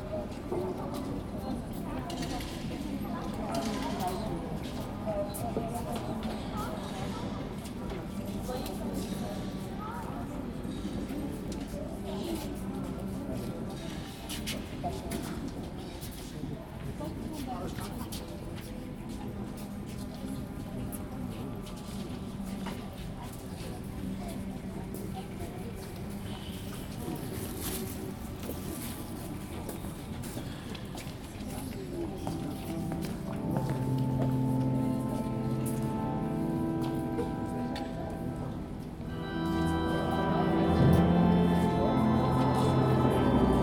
Der Klang einer Messe in einer Seitenkalpelle, Schritte und Flüstern der Besucher | Sound of celebrating a mass, steps and whispers of visitors
Deutschland, European Union